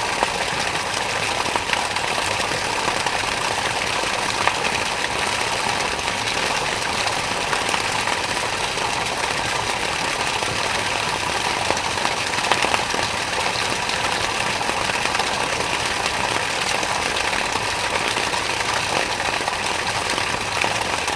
Śródmieście Północne, Warszawa - Fontanna Palac Kultury i Nauki (a)
Fontanna Palac Kultury i Nauki (a), Warszawa